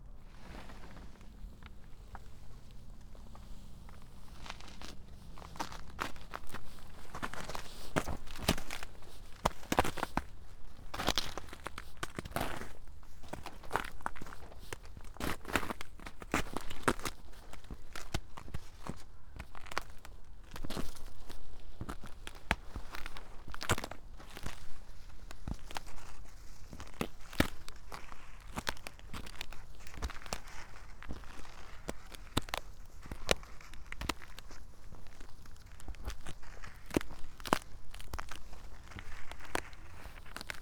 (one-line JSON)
{"title": "path of seasons, meadow, piramida - frozen snow, paper", "date": "2014-02-03 16:53:00", "description": "winter, snow, ice, trees breaking, train ...", "latitude": "46.57", "longitude": "15.65", "timezone": "Europe/Ljubljana"}